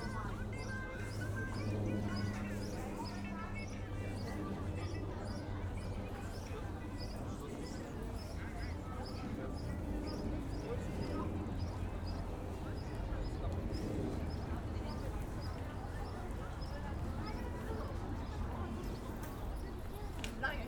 Maybachufer, Berlin, Deutschland - market walk
Soundscapes in the pandemic: walk over Maybachufer market, sunny afternoon in early spring, normally (and as you can hear from the many recordings around) this would be a crowded and lively place. not so now, almost depressed.
(Sony PCM D50, Primo EM172)